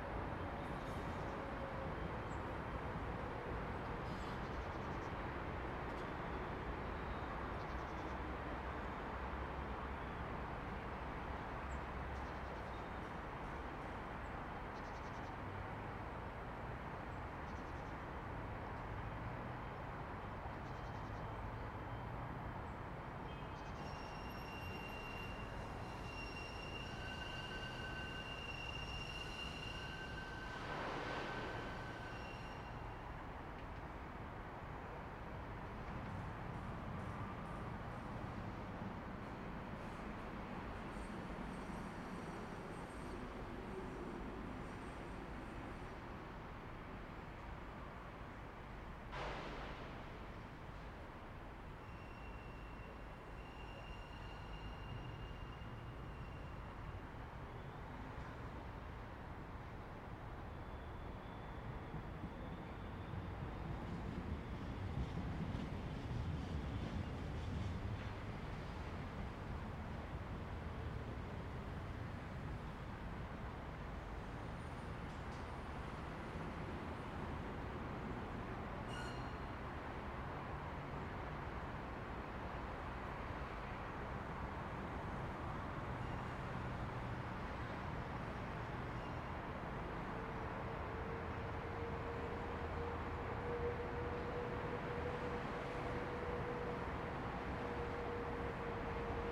City sounds recorded from a recently rebuilt bridge. Recorded with Zoom H2n.
Gdańsk, Poland, 20 September, 11:16